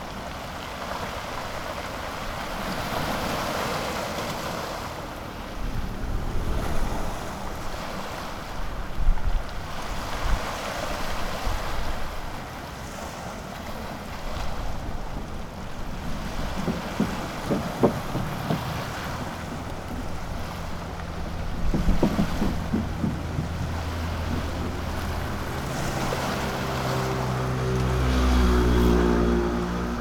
Zhongzheng Rd., Tamsui Dist. - Waves and Traffic Sound
Wind, Waves, Traffic Sound
Sony PCM D50
4 April 2012, 07:20